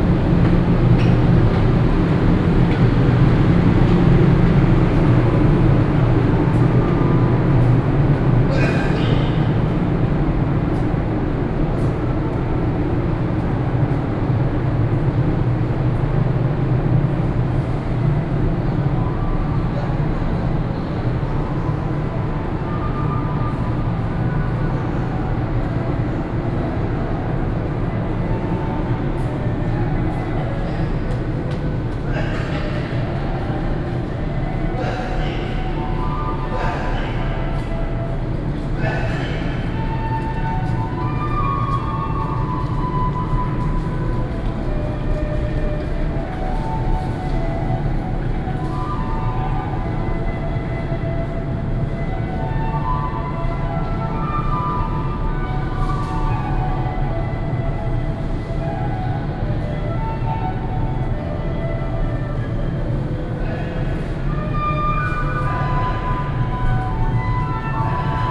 Taichung Station, Central Dist., Taichung City - Walking in the underpass
Walking in the underpass, Air conditioning noise, Street performers, Footsteps